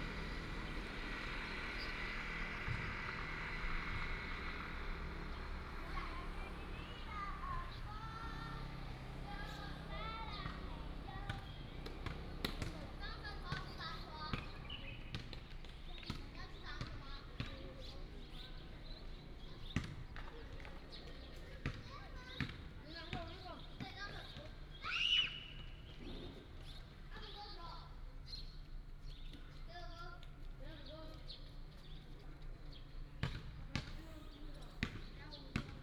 牡丹鄉石門路, Pingtung County - Township settlement center
Child, traffic sound, Birds sound, Small village, Township settlement center
Mudan Township, Pingtung County, Taiwan, April 2018